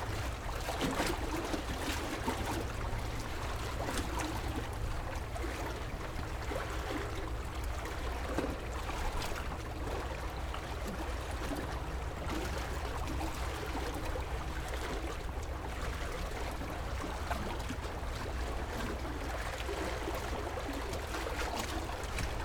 in the Bridge, Sound wave, Clipping block
Zoom H6+Rode NT4 SoundMap20141022-42)
Penghu County, Taiwan, 22 October 2014, ~09:00